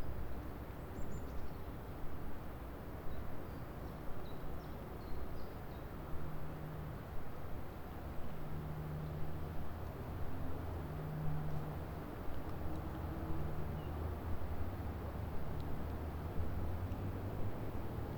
Fulda Ufer mit Boot
World Listening Day, Fulda Ufer mit Motorboot
18 July 2010, ~19:00